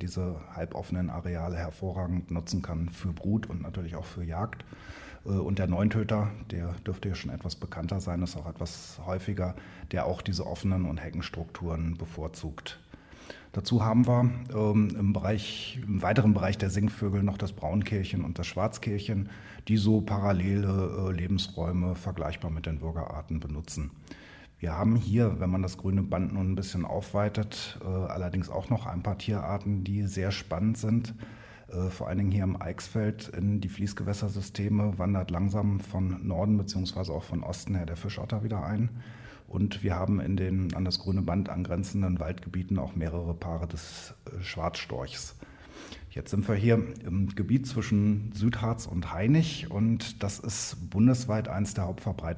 gut herbigshagen - bei der sielmann-stiftung
Produktion: Deutschlandradio Kultur/Norddeutscher Rundfunk 2009